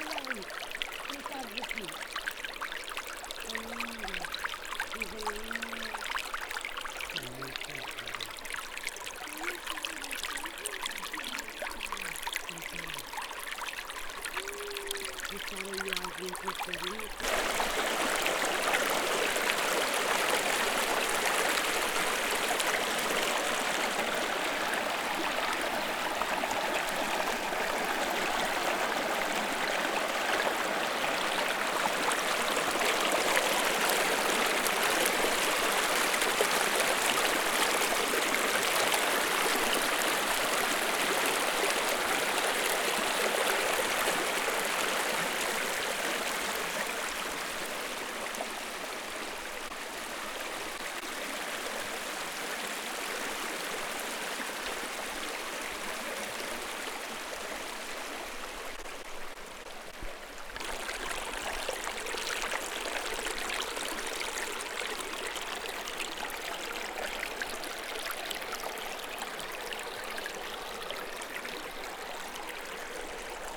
lousã, Portugal, Burgo small river
small stream, water, birds, water rumble
21 June 2011, 13:18